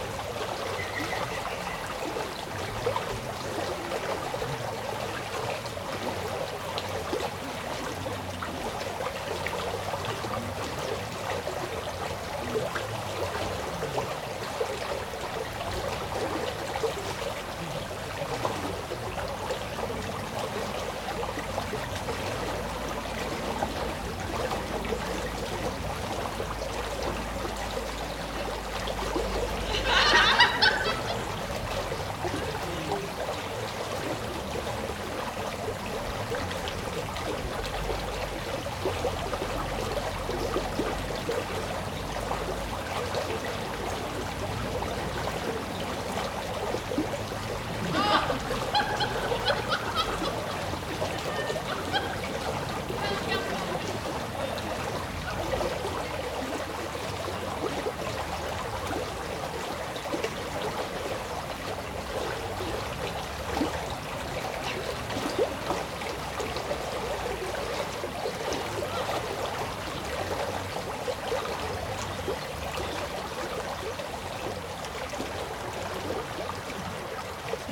Listening by the edge of a stream that passes the abbey, campers chat and laugh on the other side by a small derelict barn and occasionally cross over a small pedestrian bridge to use the toilets and return to their tents erected in the abbey gardens. As is the custom in this part of Normandy the bells give a two tone, descending ring for every quarter-of-an-hour that passes. (Fostex FR2-LE and Rode NT4a Stereo Microphone).
Lonlay-l'Abbaye, France